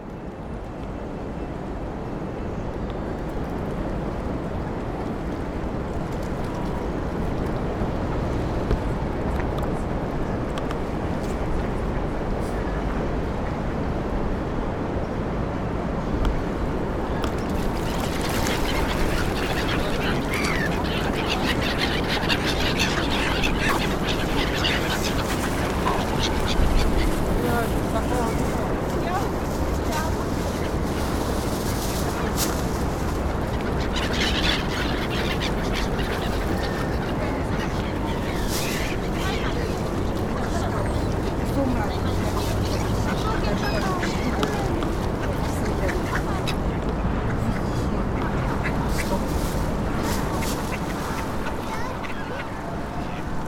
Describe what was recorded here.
Soundscape of a railway bridge and the river